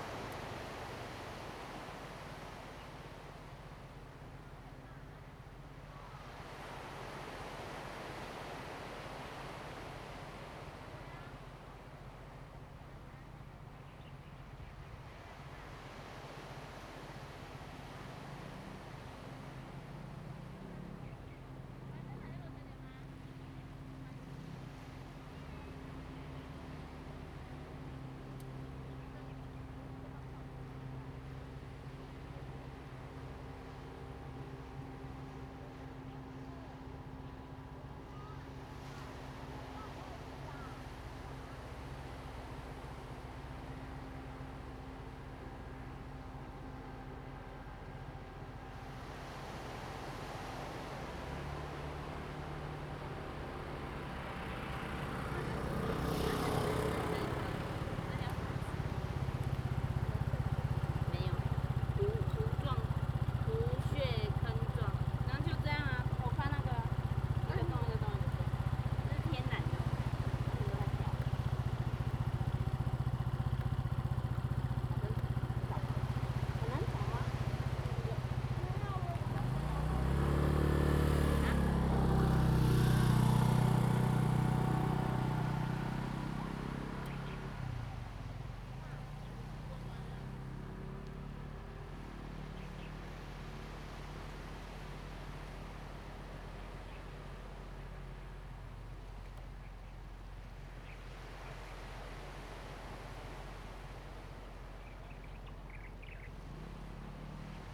厚石群礁, Liuqiu Township - the waves and Traffic Sound
Sound of the waves, Traffic Sound
Zoom H2n MS+XY
Pingtung County, Taiwan, 2 November 2014